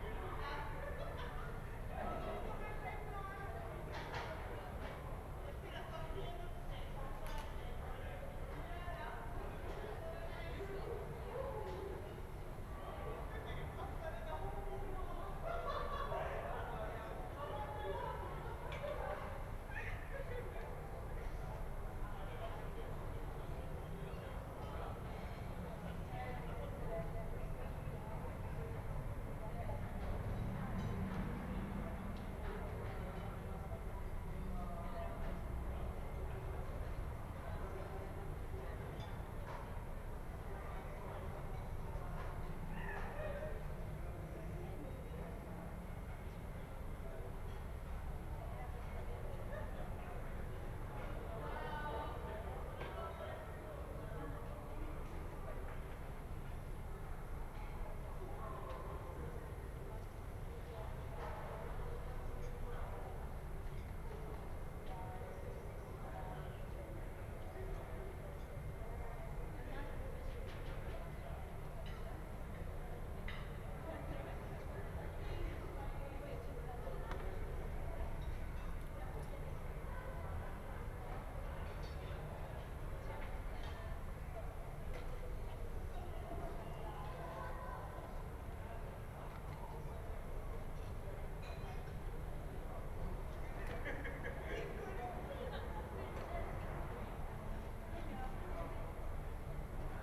Ascolto il tuo cuore, città, I listen to your heart, city. Several chapters **SCROLL DOWN FOR ALL RECORDINGS** - Round one pm with the sun but not much dog in the time of COVID19 Soundscape
"Round one pm with the sun but not much dog in the time of COVID19" Soundscape
Chapter XXXIX of Ascolto il tuo cuore, città. I listen to your heart, city
Friday April 10th 2020. Fixed position on an internal terrace at San Salvario district Turin, Thirty one days after emergency disposition due to the epidemic of COVID19.
Start at 1:15 p.m. end at 2:15 p.m. duration of recording 1h 00’00”.